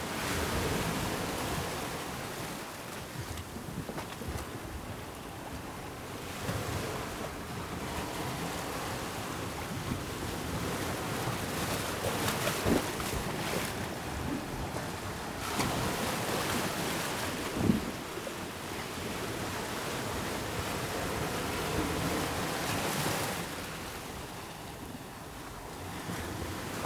Whitby, UK - Rising tide ...
Incoming tide ... open lavaliers on t bar fastened to fishing landing net pole ...